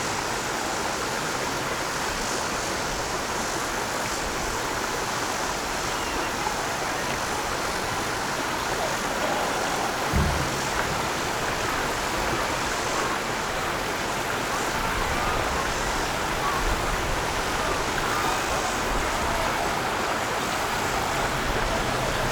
Shimen, New Taipei City - Water flow